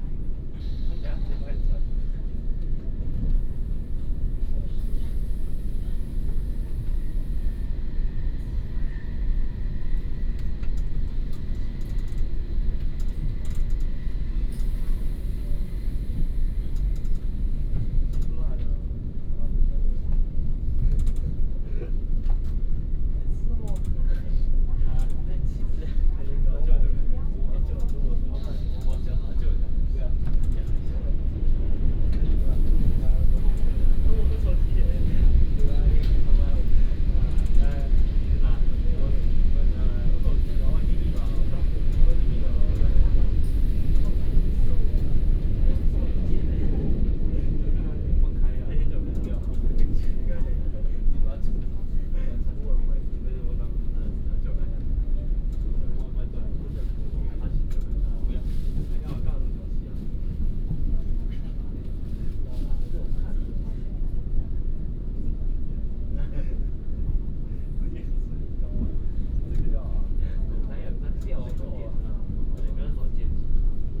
Fengyuan Dist., Taichung City, Taiwan - Train compartment
Train compartment, Messages broadcast vehicle interior